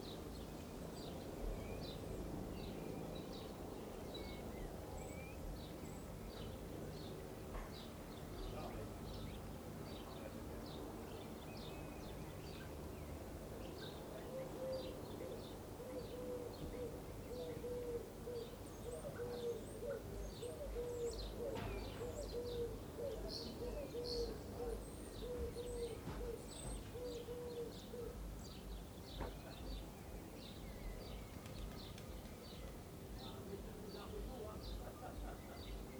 Chemin des Sablons, La Rochelle, France - helicopter passage seveso district
helicopter passage seveso district then sounds of the avifauna district of spring
ORTF DPA 4022 = Mix 2000 AETA = Edirol R4Pro